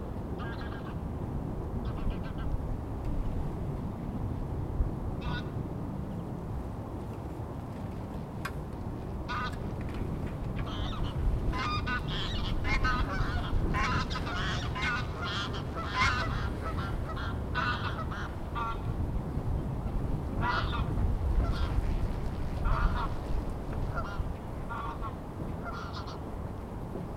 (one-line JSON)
{"title": "Västra Vindbrovägen, Uppsala, Sweden - Birdwatching tower in February", "date": "2019-02-24 11:55:00", "description": "A warm, sunny day in late February. Birdwatch tower. Water bird crying.\nRecorded with Zoom H2n, 2CH, deadcat, handheld.", "latitude": "59.83", "longitude": "17.67", "altitude": "4", "timezone": "GMT+1"}